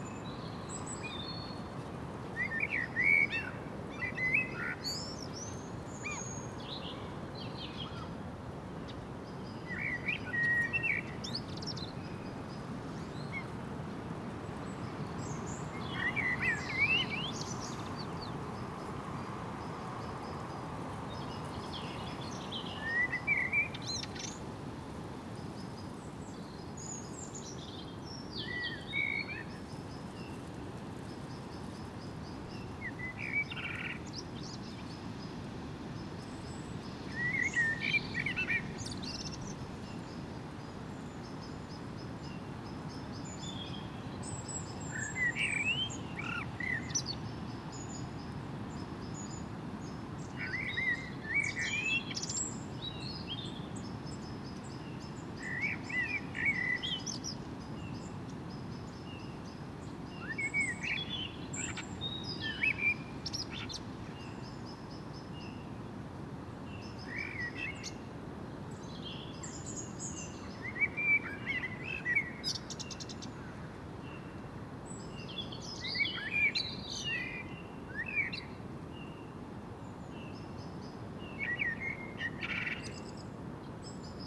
Unnamed Road, Kronshagen, Deutschland - Blackbirds and wind at dusk
Evening around sunset on a windy day, footway along a railroad embarkment beside dwellings, blackbirds singing and calling, constant wind in the populus and other trees as well as omnipresent distant traffic noise. Tascam DR-100 MK III built-in uni-directional stereo mics with furry wind screen. 120 Hz low-cut filter, trimmed and normalized.
Kronshagen, Germany